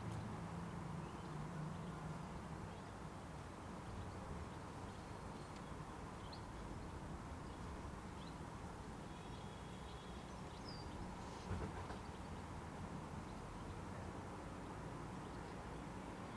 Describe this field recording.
In the backyard on an early spring morning. The cold lush wind and the sound of seagulls. soundmap international: social ambiences, topographic field recordings